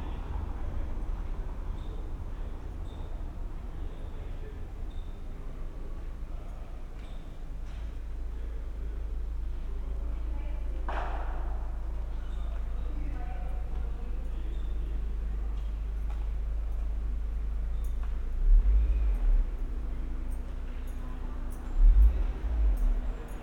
berlin: friedelstraße - the city, the country & me: night traffic
same procedure as every day
the city, the country & me: june 15, 2012